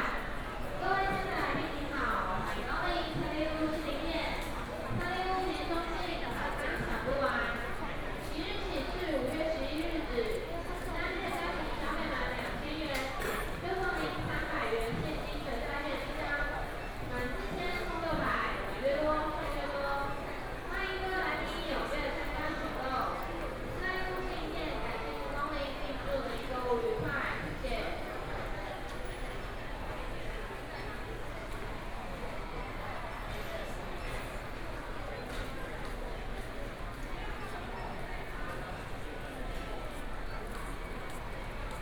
B&Q, Taipei City - Checkout counters
in the B&Q plc, in the Checkout counters
3 May 2014, ~18:00